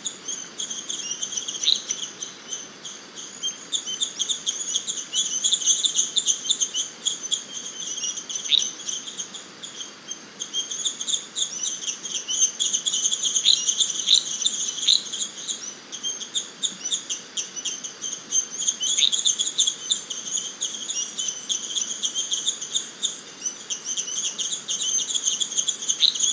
Iracambi - the pond
recorded at Iracambi, a NGO dedicated to protect and grow the Atlantic Forest
Muriaé - MG, Brazil, January 2017